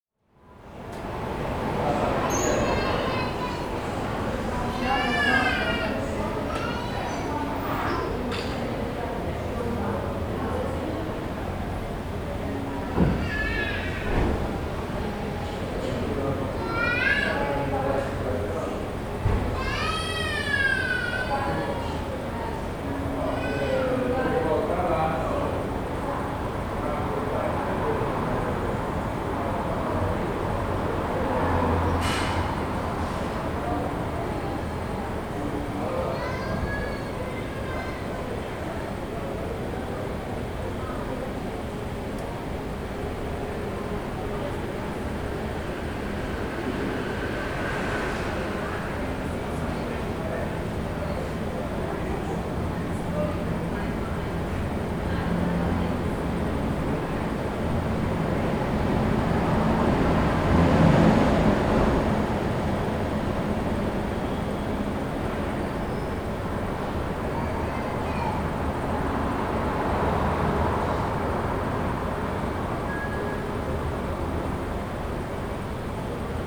Put recorder on the window sill at 8.30 in the morning. Everyday Bologna side street sounds.